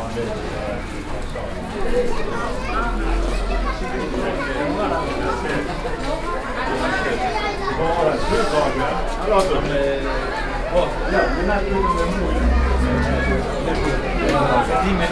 Schaerbeek, Rue de lAgriculture, Groupe scolaire Georges Primo School
Schaerbeek, Rue de lAgriculture, Groupe scolaire Georges Primo.
Children and parents, bring the noise!